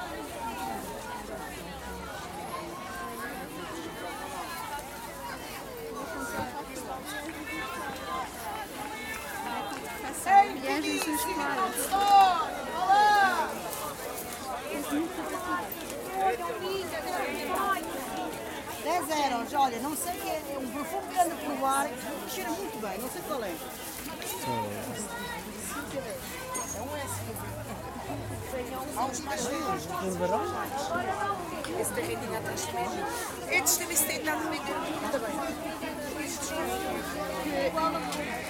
Feira Carcavelos, Portugal - busy morning in flea market
It´s a busy Thursday morning selling clothes and goodies.
Wandering around the area.
Recorded with Zoom H6.